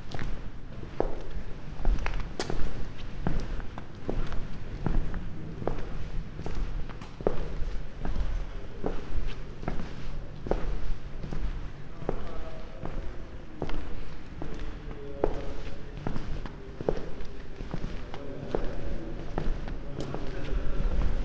France, Barrage Vaubane, Strasbourg, Frankreich - A walk through Barrage Vauban
Walking through Barrage Vauban, a covered bridge. Some people passing by. Recorded with an Olympus LS 12 Recorder using the built-in microphones. Recorder hand held, facing slightly downwards.